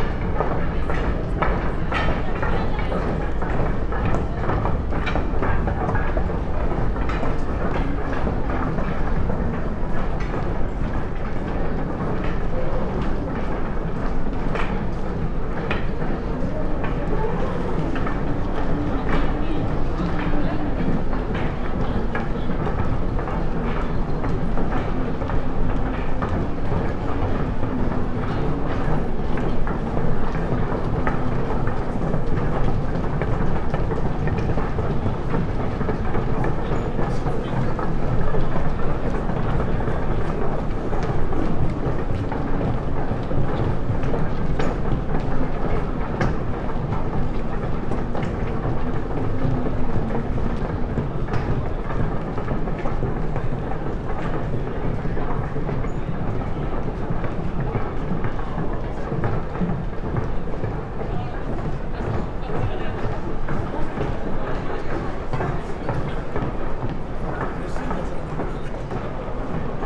shibuya metro station, tokyo - steps flow
walkers and their musical steps
Tokyo, Japan, 18 November 2013